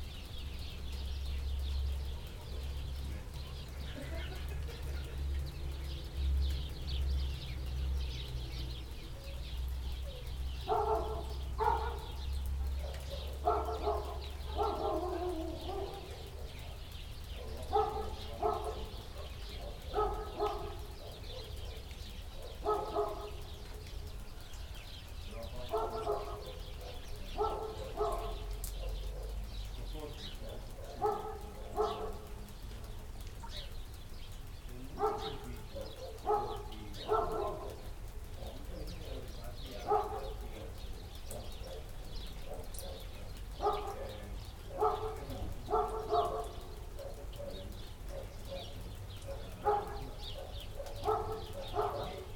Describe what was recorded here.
Morning, it snowed and the wolves are hauling.